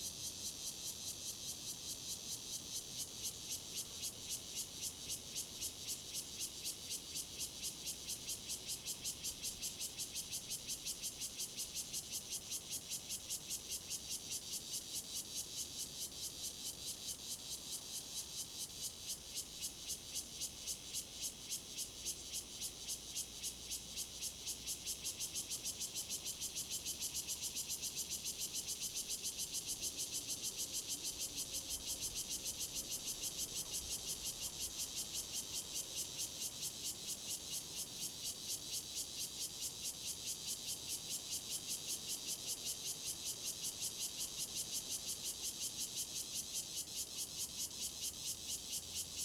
{
  "title": "嘉蘭村, Jinfong Township - Cicadas sound",
  "date": "2014-09-05 10:17:00",
  "description": "Cicadas sound\nZoom H2n MS +XY",
  "latitude": "22.62",
  "longitude": "120.98",
  "altitude": "663",
  "timezone": "Asia/Taipei"
}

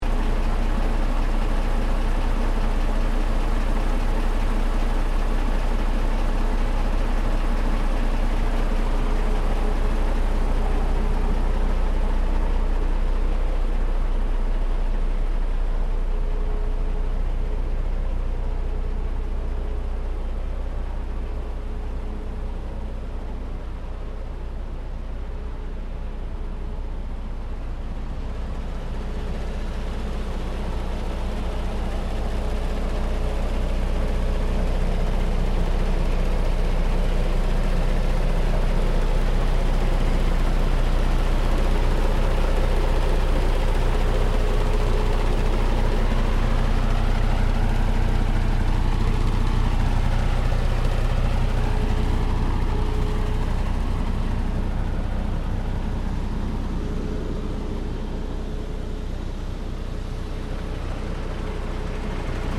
{
  "title": "Lodz Fabryczna PKP, Lodz",
  "date": "2011-11-17 13:02:00",
  "description": "Closed train station, parking, Lodz\nauthor: Aleksandra Chciuk",
  "latitude": "51.77",
  "longitude": "19.47",
  "altitude": "213",
  "timezone": "Europe/Warsaw"
}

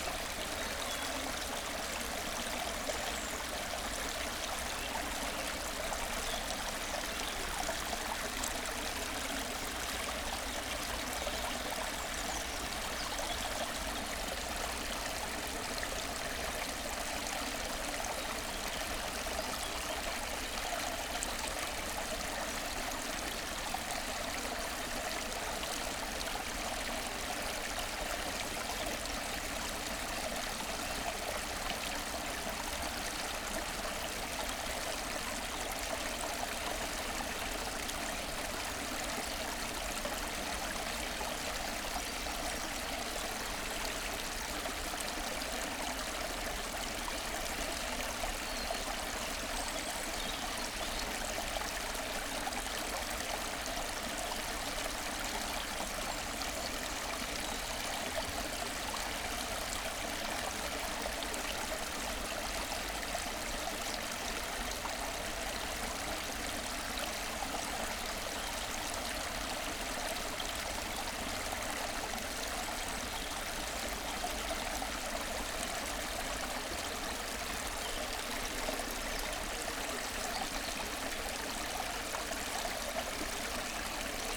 {
  "title": "Thielenbruch, Köln, Deutschland - Strunde, Umbach",
  "date": "2019-03-21 18:30:00",
  "description": "sound of small river Strunde in Thielenbruch forest. The Strunde was an important source of energy at the begining of industrialistion times, when the water was driving over 40 mills along its path.\n(Sony PCM D50, DPA4060)",
  "latitude": "50.97",
  "longitude": "7.09",
  "altitude": "79",
  "timezone": "Europe/Berlin"
}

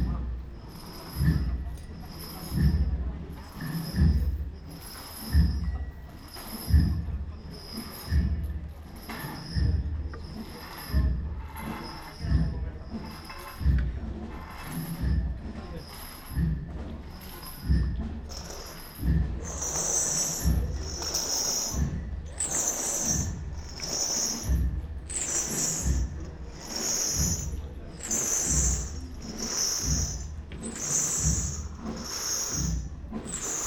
{
  "title": "Calle Garachico, Santa Cruz de la Palma, Santa Cruz de Tenerife, Spanien - Semana Santa Beat",
  "date": "2022-04-15 18:25:00",
  "description": "Semana Santa Beat\nSemana Santa procession. An exceptional rhythm that focuses on slow progress is implemented magnificently. This specific beat helps carry out all duties like carrying heavy statues and big crosses.",
  "latitude": "28.68",
  "longitude": "-17.76",
  "altitude": "17",
  "timezone": "Atlantic/Canary"
}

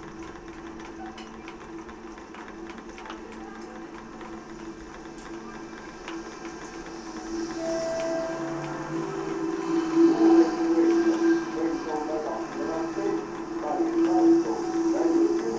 {
  "title": "Tallinn, Baltijaam drainpipe - Tallinn, Baltijaam drainpipe (recorded w/ kessu karu)",
  "date": "2011-04-20 14:28:00",
  "description": "hidden sounds, water drips and a station announcement amplified by a station gutter drainpipe at Tallinns main train station.",
  "latitude": "59.44",
  "longitude": "24.74",
  "timezone": "Europe/Berlin"
}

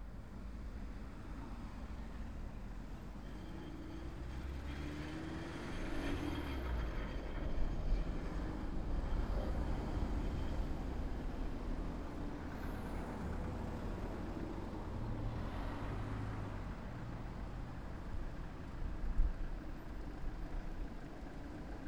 {
  "title": "Navahrudak, Belarus, Lenin's place",
  "date": "2015-08-01 11:40:00",
  "description": "traffic at the Lenin's place",
  "latitude": "53.60",
  "longitude": "25.83",
  "altitude": "318",
  "timezone": "Europe/Minsk"
}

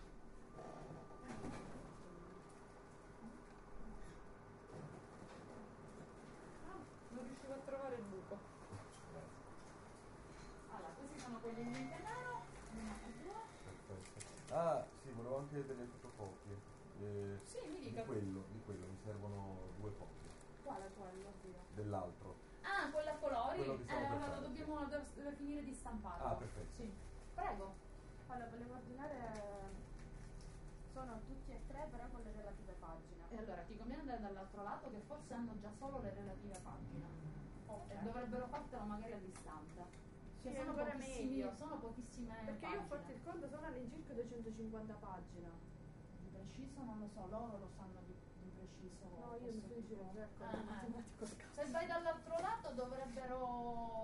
{
  "title": "In copisteria, h 10,30 25/01/2010",
  "description": "Copisteria, (romanlux) (edirol r-09hr)",
  "latitude": "38.11",
  "longitude": "13.36",
  "altitude": "27",
  "timezone": "Europe/Berlin"
}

